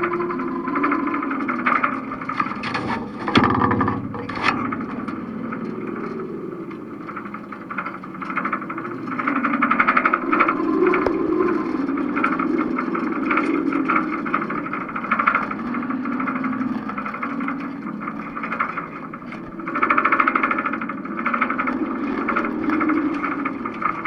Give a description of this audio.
stormy day (force 7-8), contact mic on the side stay of a sailing boat, the city, the country & me: june 13, 2013